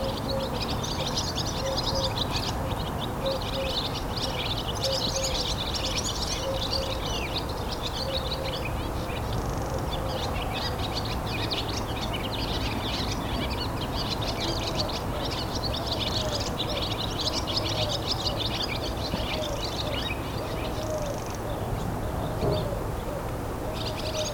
Chaumont-Gistoux, Belgique - Swallows
Swallows concert near an old farm. It was the end of the nap, they all went in the wind just after the recording.